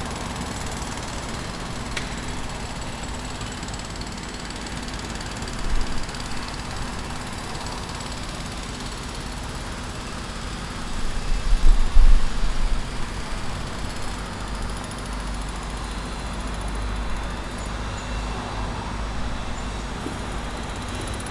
Building Site, Lesi Ukrainky Blvd, Kiev, Ukraine
While in Kiev, we stayed in a 4th floor apartment directly across the street from this building site. It's quite well along now and resembles the hotel building just along the street.
Schoeps CCM4Lg & CCM8Lg M/S in modified Rode blimp directly into a Sound Devices 702 recorder.
Edited in Wave Editor on Mac OSx 10.5